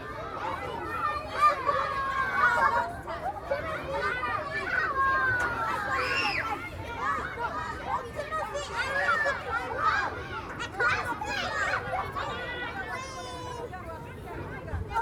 Kilburn Grange Park, Kilburn, London - Kilburn Grange Park playground
Kids playing after school.
19°C
16 km/hr 230
Greater London, England, United Kingdom, 30 March, ~16:00